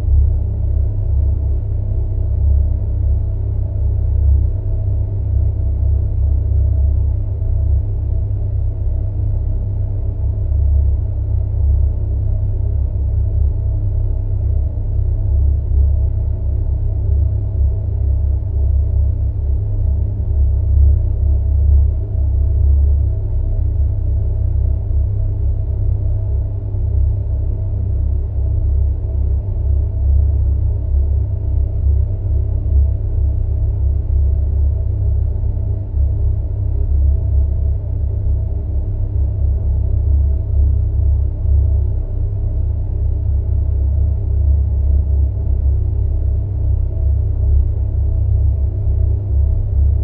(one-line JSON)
{"title": "Kraftwerk Freudenau - Turbine Resonance", "date": "2017-03-21 21:30:00", "description": "contact mics on rail", "latitude": "48.18", "longitude": "16.48", "altitude": "153", "timezone": "Europe/Vienna"}